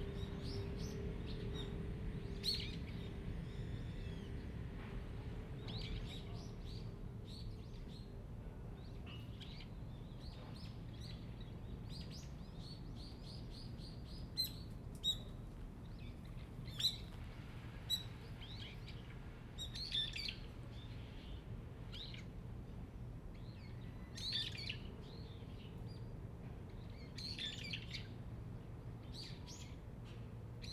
Garak-ro, Gimhae-si, 韓国 - Birds singing
Birds singing, Traffic Sound, Aircraft flying through
Zoom H2n MS+XY
Gimhae, Gyeongsangnam-do, South Korea, 15 December 2014, 10:22